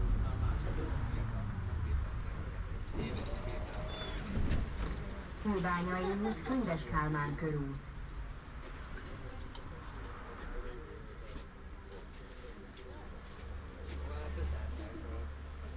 Budapest, Arena, Hungary - (81 BI) Tram ride
Binaural recording of a tram ride from Arena to Könyves Kálmán körút.
Recorded with Soundman OKM on Zoom H2n.
Közép-Magyarország, Magyarország, 22 January 2017